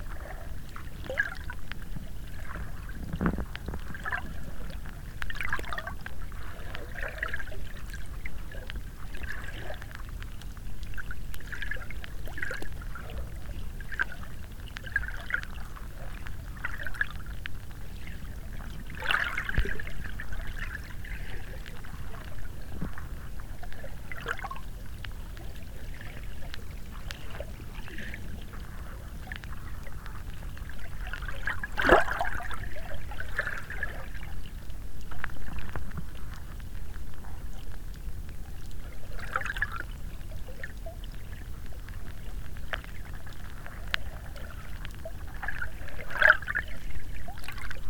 practically no living creatures...some drone-buzz from the city